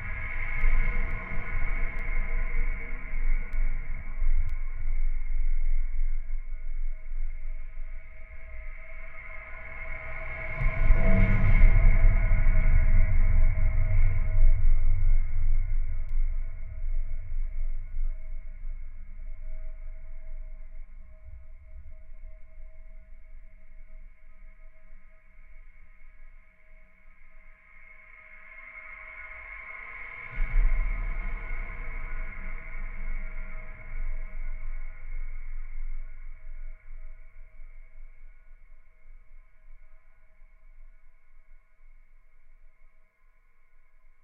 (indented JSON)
{
  "title": "Nemeikščiai, Lithuania, trucks on bridge",
  "date": "2022-09-13 15:10:00",
  "description": "Heavy trucks on physically trembling bridge. Contact microphones on metallic parts and concrete.",
  "latitude": "55.49",
  "longitude": "25.64",
  "altitude": "142",
  "timezone": "Europe/Vilnius"
}